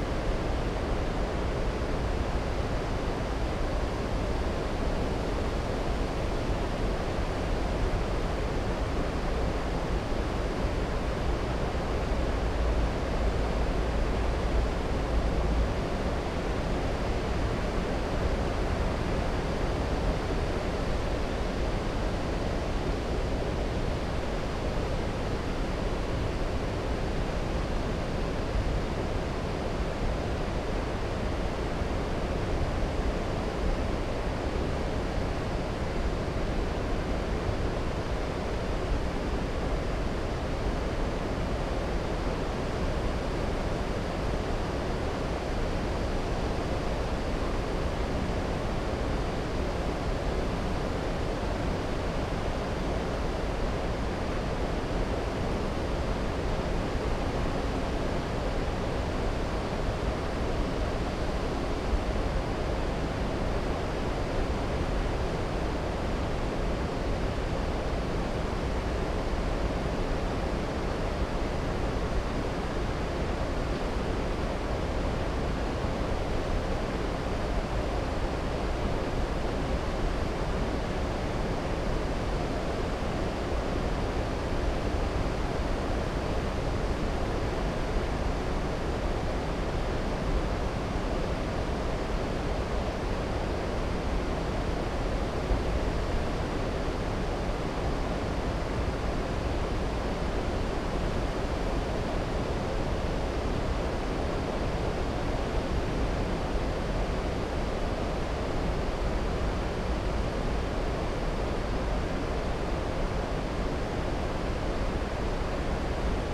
Thames Path, Reading, UK - Caversham Wier
A meditation by Caversham Weir (spaced pair of Sennheiser 8020s and SD MixPre 6).
6 September 2017, 12:30